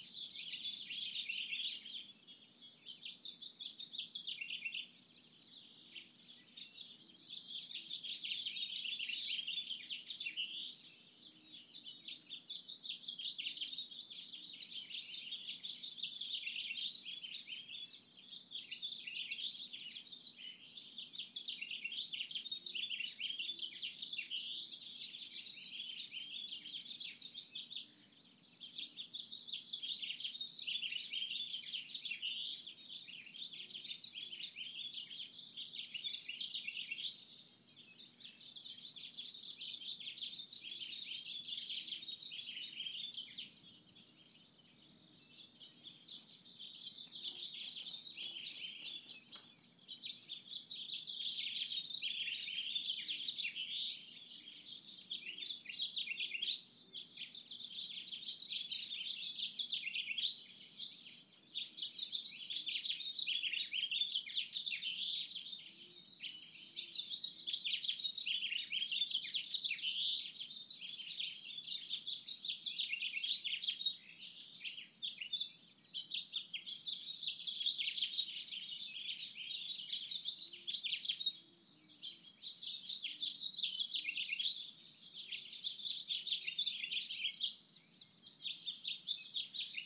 SMIP RANCH, D.R.A.P., San Mateo County, CA, USA - Waking with the Birds
Early morning bird activity between row of pines and the artist's barn.
9 June 2014, 05:00